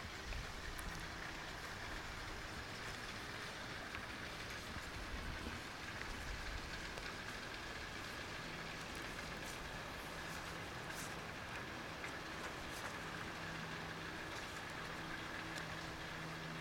Audio recorded by Hannah Withers and Hudson Withers. Walking on Trail 4, Ouabache State Park, Bluffton, IN. Recorded at an Arts in the Parks Soundscape workshop at Ouabache State Park, Bluffton, IN. Sponsored by the Indiana Arts Commission and the Indiana Department of Natural Resources.
Bluffton, IN, USA